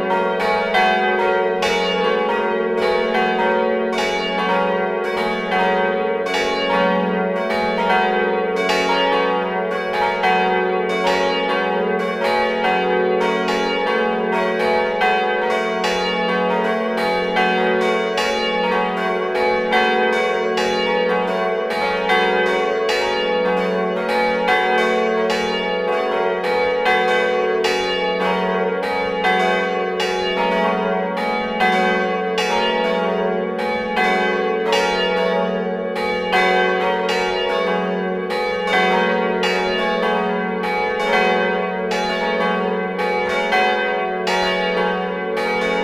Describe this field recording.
La Hulpe bells, ringed manually with ropes. It's a very good ensemble of bells, kept in a good state by a passionnate : Thibaut Boudart. Thanks to him welcoming us in the bell tower.